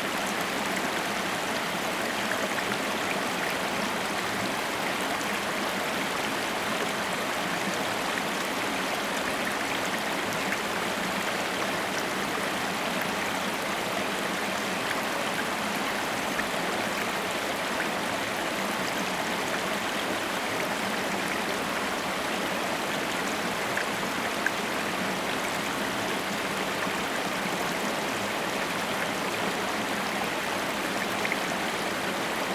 Simpson Lake Spillway, Valley Park, Missouri, USA - Simpson Lake Spillway
Simpson Lake Spillway. Recording of Simpson Lake Spillway
Missouri, United States, 6 December 2020